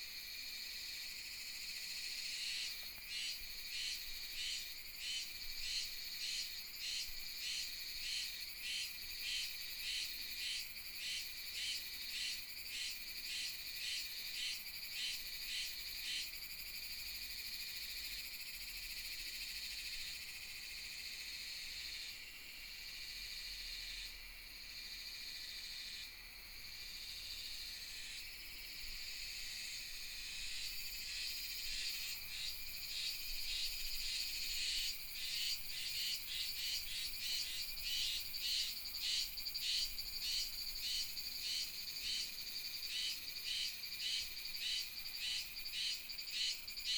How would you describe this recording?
Insect beeps, Cicadas sound, r, Sound of water, Binaural recordings, Sony PCM D100+ Soundman OKM II